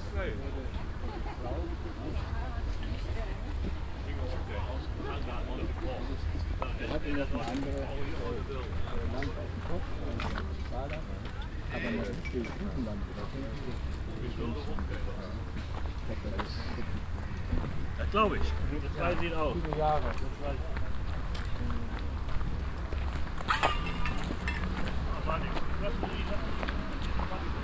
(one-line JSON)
{"title": "cologne, scheibenstreet, horse race track, parking area, flee market", "date": "2009-08-19 13:36:00", "description": "flee market in the early afternoon - talks and sounds while packing\nsoundmap nrw: social ambiences/ listen to the people in & outdoor topographic field recordings", "latitude": "50.98", "longitude": "6.95", "altitude": "45", "timezone": "Europe/Berlin"}